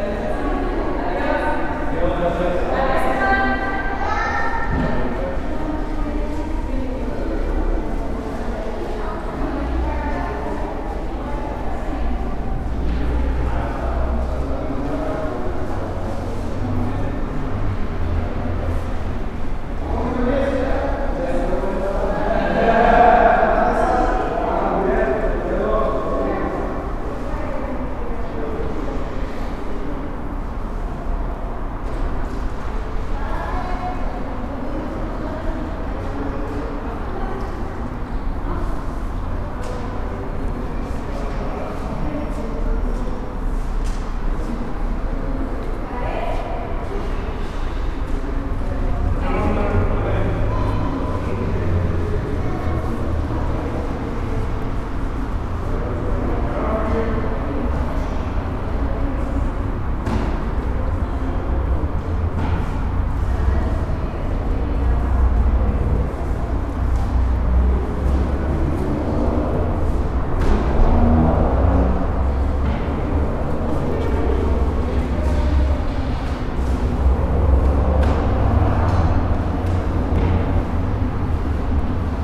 Blvrd Jose María Morelos, Granjas el Palote, León, Gto., Mexico - Agencia de carros BMW Euromotors León.
Car agency BMW Euromotors León.
I made this recording on april 23rd, 2022, at 2:22 p.m.
I used a Tascam DR-05X with its built-in microphones and a Tascam WS-11 windshield.
Original Recording:
Type: Stereo
Esta grabación la hice el 23 de abril de 2022 a las 14:22 horas.
23 April, 14:22